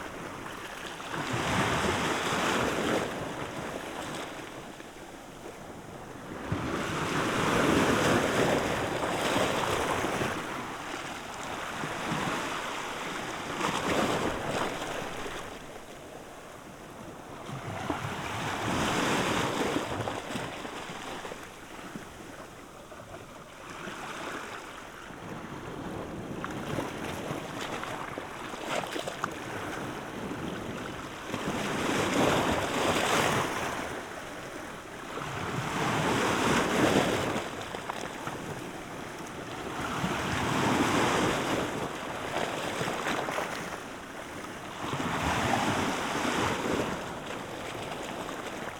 St.Peter's Pool, Delimara, Marsaxlokk, Malta - waves
light waves at St.Peter's Pool, Delimara, Malta
(SD702, AT BP4025)
5 April